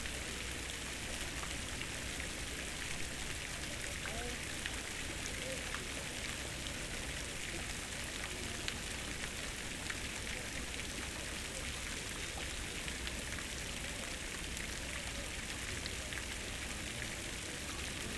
Fuente centro de Ibaguè- Fountain downtown ibaguè